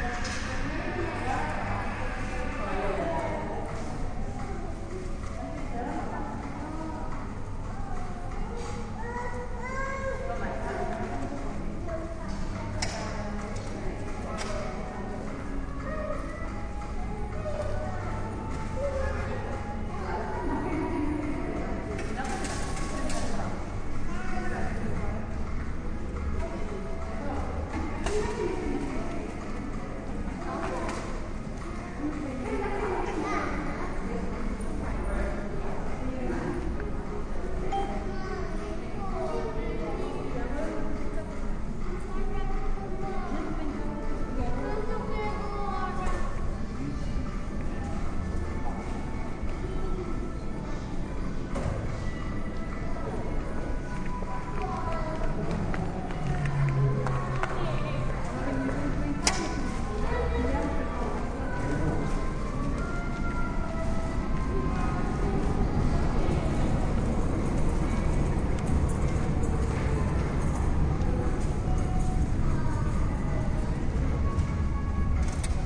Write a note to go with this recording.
at metro station, loading a travel card. soft music and voices of children